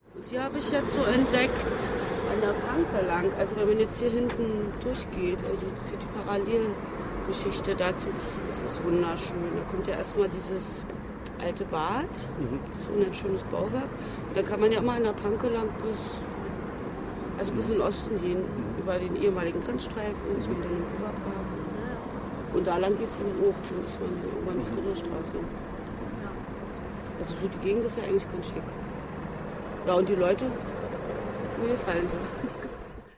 Badstrasse / Koloniestrasse Berlin Wedding
recommendation for a walk along the panke
Berlin, Germany, 26 April 2011